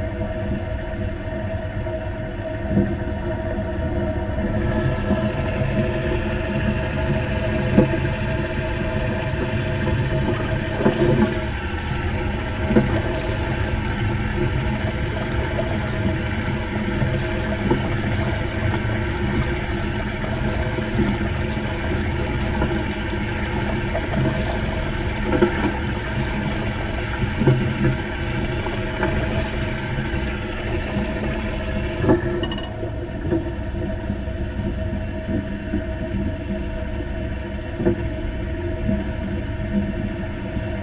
hagen, replacement train - old school train toilet
sound of a toilet cabin in an older IC train. it discharges directly into the trackbed, newer trains have closed tanks.
Deutschland, 29 November 2010